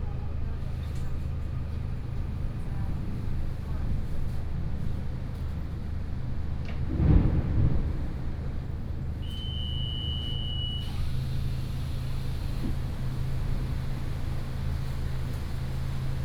Xizhi District, New Taipei City - Train compartment
Train compartment, Outside the car is under thunderstorm
New Taipei City, Taiwan